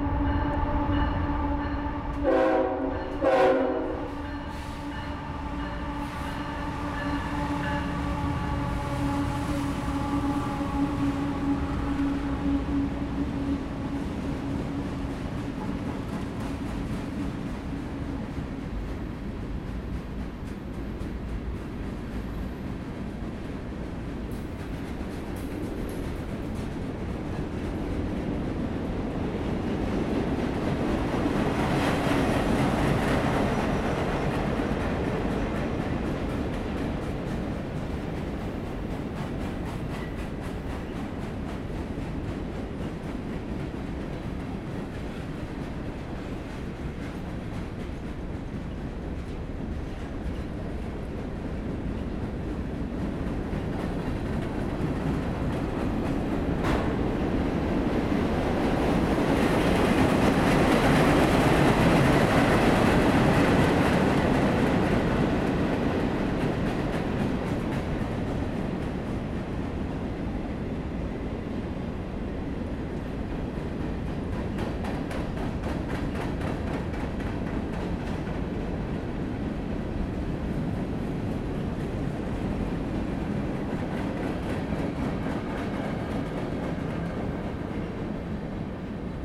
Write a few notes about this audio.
CSX Freight train passing recorded with H4n Zoom